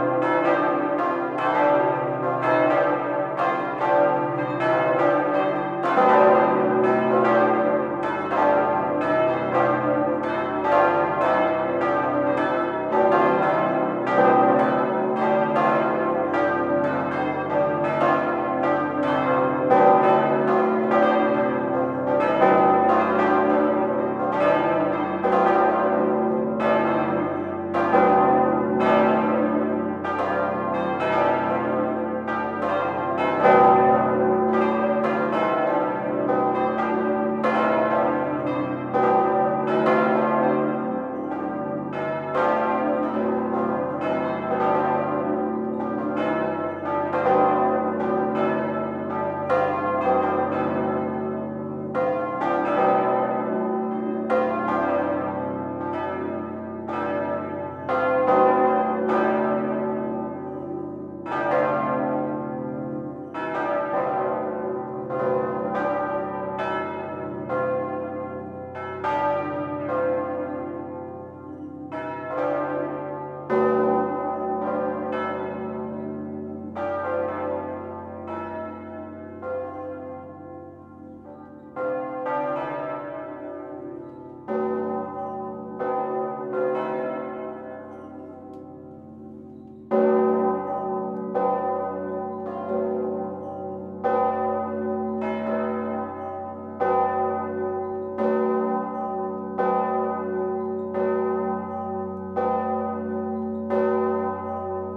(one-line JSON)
{"title": "Pl. Saint-Vaast, Armentières, France - Armantières - église St-Vaast - volée tutti", "date": "2020-07-01 10:00:00", "description": "Armentières (Nord)\ntutti volée des cloches de l'église St-Vaast", "latitude": "50.69", "longitude": "2.88", "altitude": "18", "timezone": "Europe/Paris"}